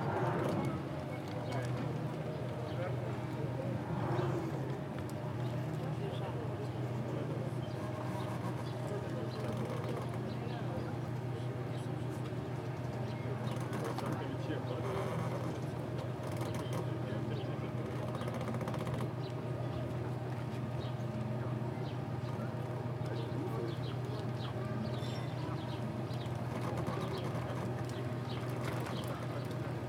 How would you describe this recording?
Recordist: Saso Puckovski, Description: Middle of the harbour between a restaurant and a crane. Harbour bell, wooden boats crackling and tourists passing by. Recorded with ZOOM H2N Handy Recorder.